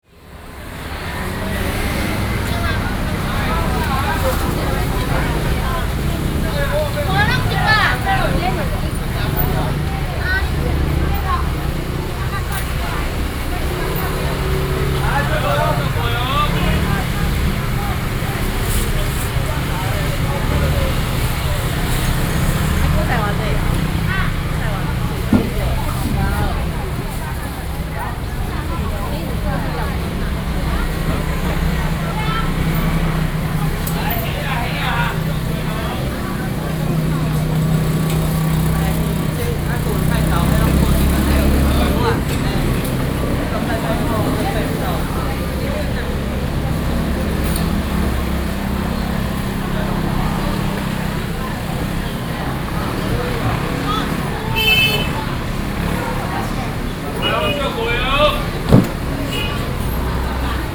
Xinxing Rd., Banqiao Dist., New Taipei City - Walking through the traditional market
Walking in the traditional market
Sony PCM D50+ Soundman OKM II